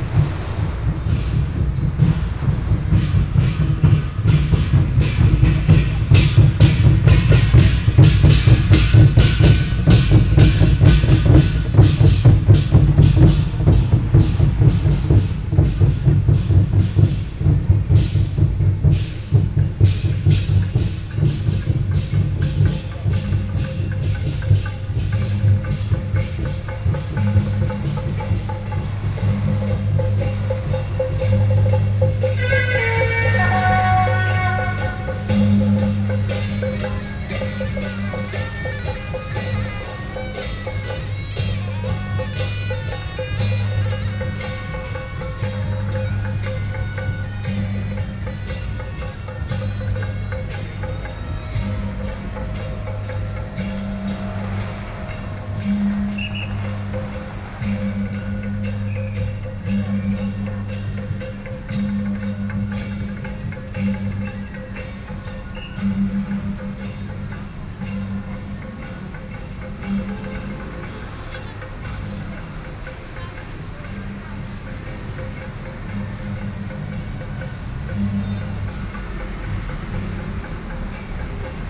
Zhongzheng Rd., Luzhou District - Traditional temple Festival
Traditional temple Festival, Traffic Noise, Binaural recordings, Sony PCM D50 + Soundman OKM II